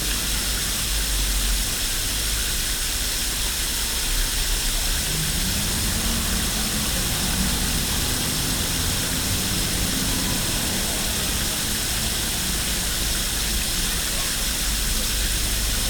berlin, gendarmenmarkt/französische straße: sewer - the city, the country & me: water of a firehose runs into a sewer
water of a firehose runs into a sewer while the carillon bells of the french cathedral ringing in the background
the city, the country & me: july 7, 2016